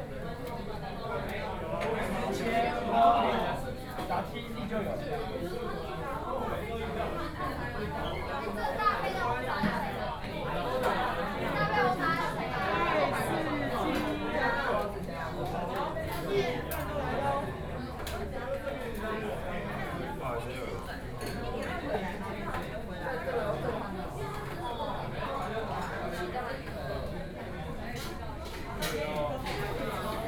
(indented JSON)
{
  "title": "Gongguan, Taipei - In the restaurant",
  "date": "2013-05-08 14:32:00",
  "description": "In the restaurant, Sony PCM D50 + Soundman OKM II",
  "latitude": "25.02",
  "longitude": "121.53",
  "altitude": "21",
  "timezone": "Asia/Taipei"
}